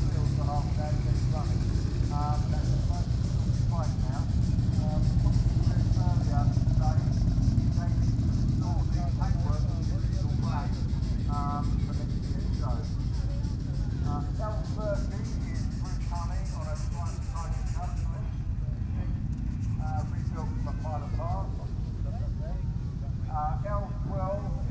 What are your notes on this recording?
Recorded at the Woodcote Steam Rally, lovely event where steam traction engines, steamrollers and a myriad of similar restored vehicles are on show, and have their turn at parading around the show ground. This recording is of the motorcycles doing their round, with a commentary to fit. Sony M10 with built-in mics.